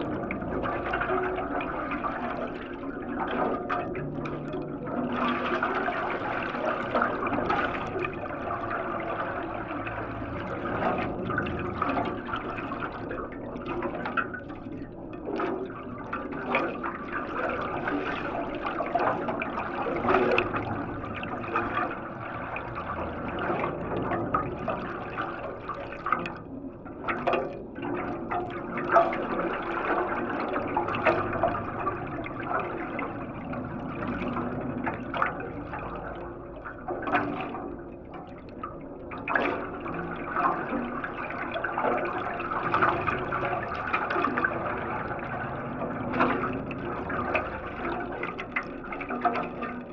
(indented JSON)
{
  "title": "Friedelstr., Neukölln, Berlin - wastewater flow in tube",
  "date": "2014-08-24 14:05:00",
  "description": "at a different position",
  "latitude": "52.49",
  "longitude": "13.43",
  "altitude": "43",
  "timezone": "Europe/Berlin"
}